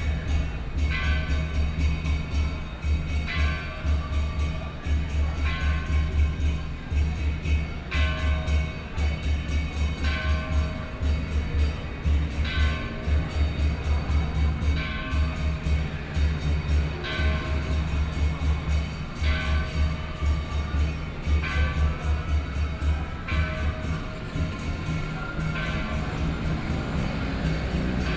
Chongqing N. Rd., Datong Dist. - walking on the Road
walking on the Road, Through a variety of different shops
Please turn up the volume a little
Binaural recordings, Sony PCM D100 + Soundman OKM II